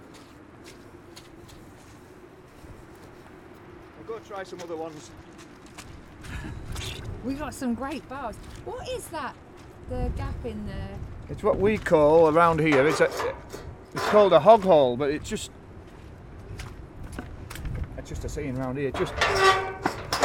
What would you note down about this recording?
This is the sound of Brian Knowles's one year old ewes. The field is wet and very close to a main road; you can hear the traffic travelling by very close and at high speed. Brian also explains what a hogg hole is, i.e. a special hole created in a wall which sheep can use to move between fields.